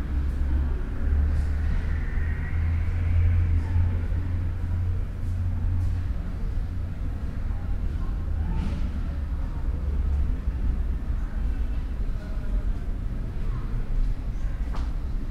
sitting and waiting at Schiphol airport ambience Holland
The Netherlands